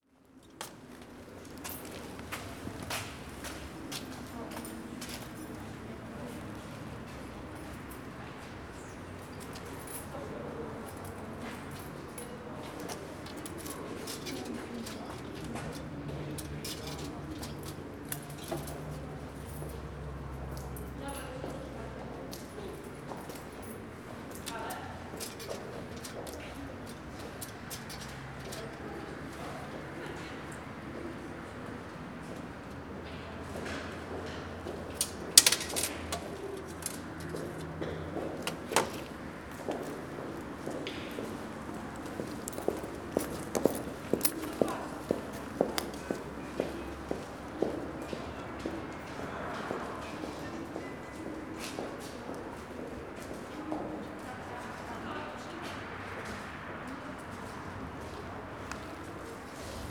Poznan, Piatkowo district, Szymanowskiego tram stop - ticket machine
a few people gathered around a ticket machine on a tram stop waiting for their turn to get their ticket.
Poznan, Poland, 2 March 2014